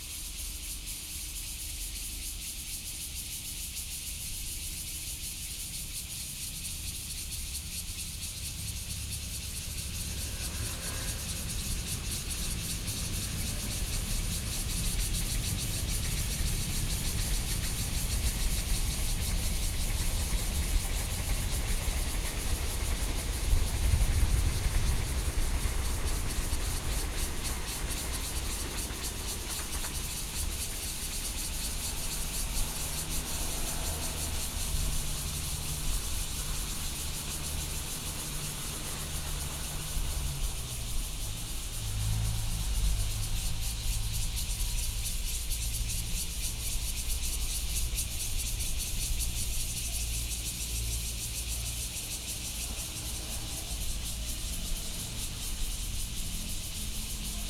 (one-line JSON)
{"title": "Fugang, Yangmei City, Taoyuan County - Hot noon", "date": "2013-08-14 13:22:00", "description": "In a disused factory, Cicadas., Train traveling through, Distant thunder hit, Sony PCM D50 + Soundman OKM II", "latitude": "24.93", "longitude": "121.08", "altitude": "115", "timezone": "Asia/Taipei"}